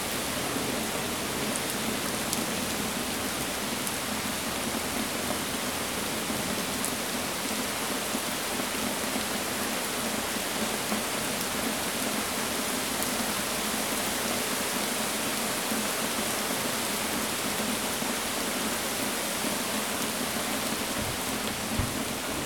Paris.
Orage entendu depuis le 3eme étage d'un immeuble.Pluie qui tombe dans une petite rue.
Rain and thunderstorm heared from the 3rd floor window .
20 June 2011, 22:10